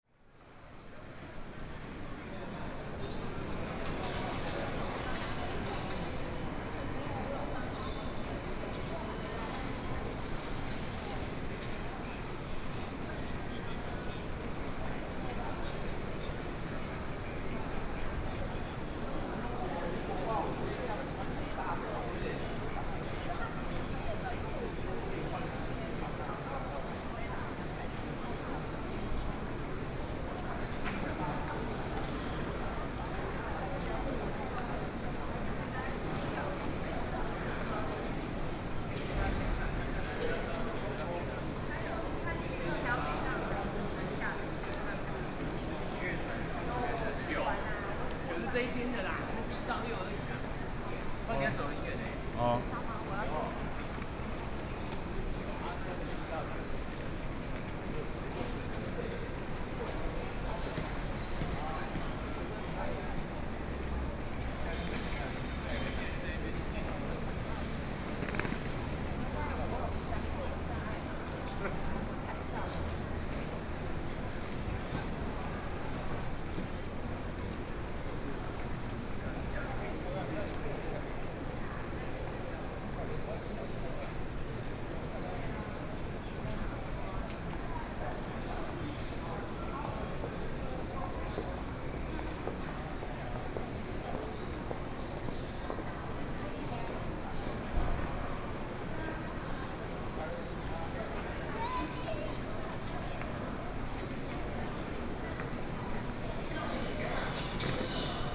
THSR Taichung Station - Station hall
from Station hall walking to Platform, Station broadcast messages, Sony PCM D50+ Soundman OKM II
Wuri District, 站區一路(二樓大廳層)